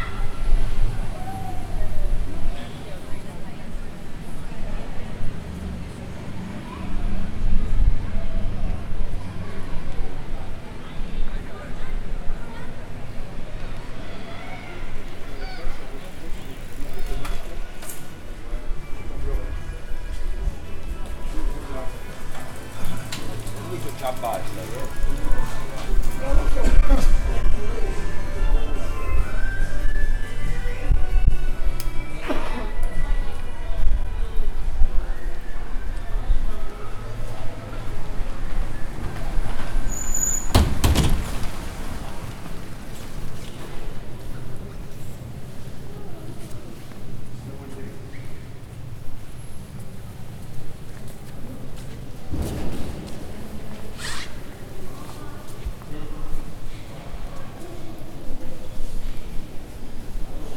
Sound postcard of the streets of Palermo on the 23rd of June. This track is a composition of different recordings made on this day in the garden of the Palazzo dei Normanni, in San Giovanni degli Eremiti, in the cathedral of Palermo and in the streets of the historical center.
Recorded on a Zoom H4N.